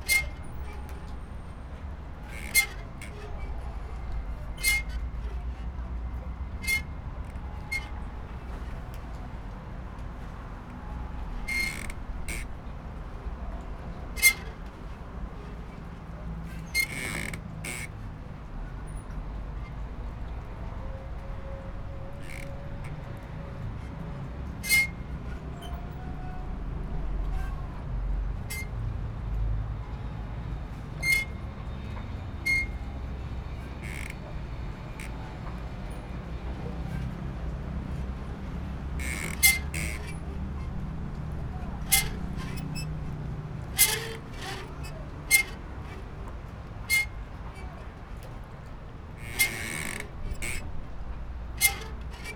Heraklion Yacht Port - spring
a spring for securing a yacht to the pier. creaking as the boat bobs on gentle waves
Heraklion, Greece, 28 September